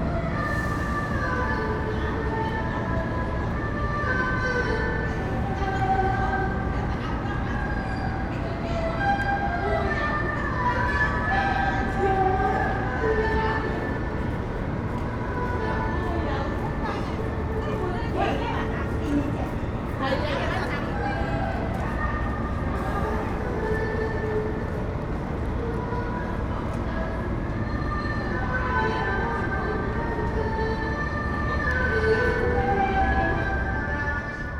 neoscenes: Chinese musician and phones